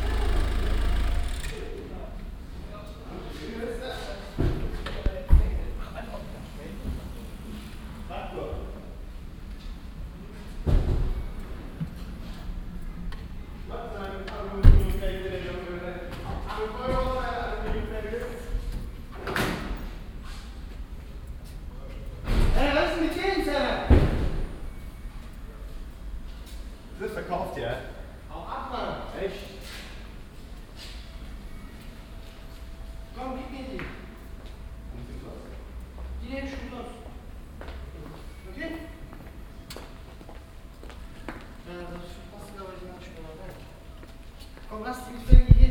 cologne, maybachstrasse, freie tankstelle, beladungen
nachmittags beim beladen eines lkw im halligen innenbau einer freien tankstelle, ein parkendes fahrzeug, männerkonversationen
soundmap nrw: social ambiences/ listen to the people - in & outdoor nearfield recordings
28 August 2008, ~09:00